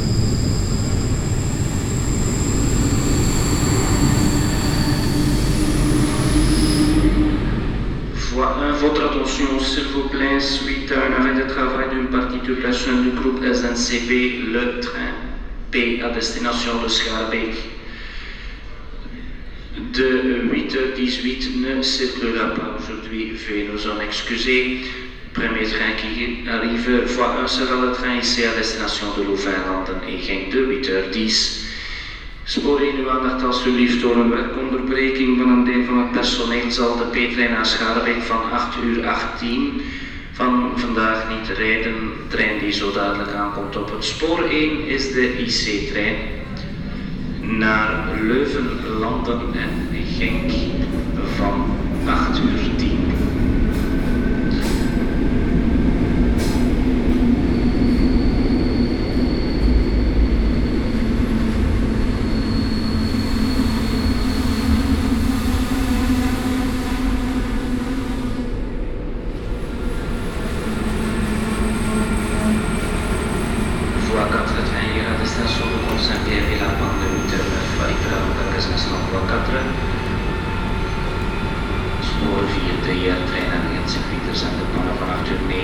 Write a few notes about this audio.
Brussels, Gare Centrale Greve / Strike.